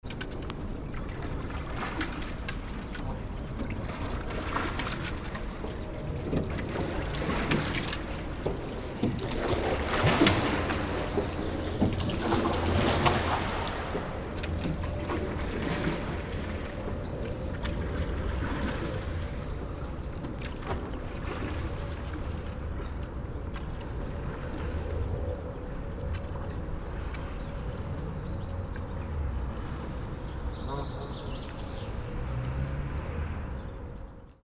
Taplow. Bridge over the Thames. - Taplow. Bridge over the Thames
Rowers on the River Thames passing under the railway bridge (The brick bridge was designed by Isambard Kingdom Brunel and is commonly referred to as 'The Sounding Arch' due to its' distinctive echo).